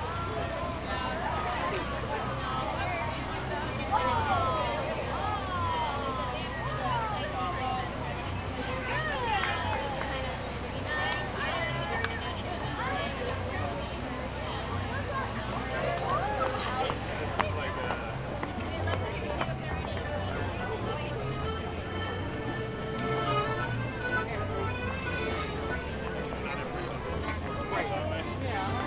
Main Street, Vancouver, BC, Canada - Main Street Car Free Festival